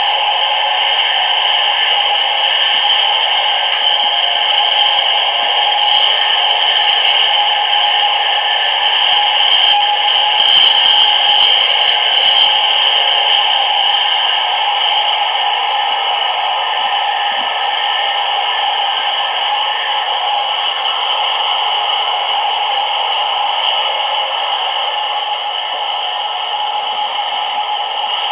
Bahn, Gleise und Zugpersonal von Erfurt nach Berlin